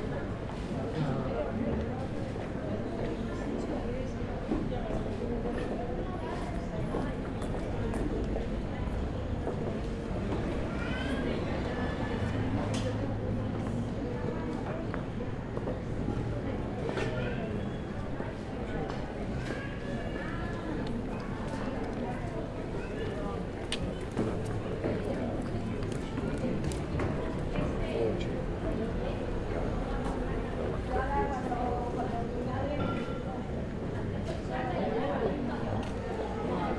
Estepona, streets with little shops
sitting on a bench in a street with little shops, people passing by, relaxed athmosphere
Estepona, Spain